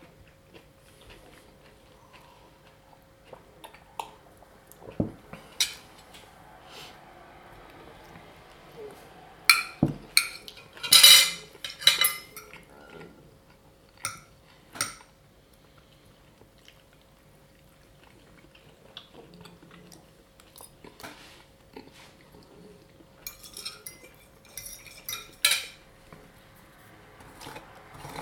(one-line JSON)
{"title": "Belgatto PU, Italia - cena in compagnia", "date": "2013-03-07 20:34:00", "description": "una cena in compagnia di un amico, in silenzio senza parlare, solo mangiare", "latitude": "43.84", "longitude": "12.99", "altitude": "22", "timezone": "Europe/Rome"}